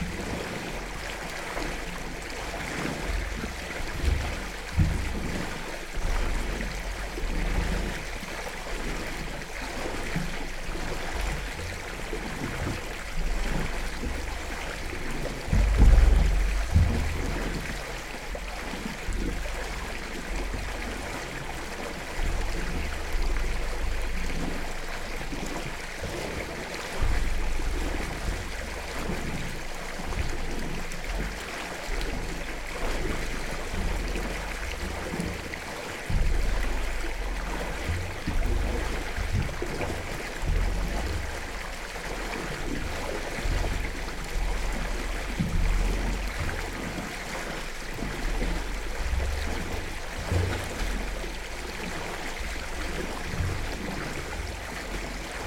Maneiciai., Lithuania, water pipe bass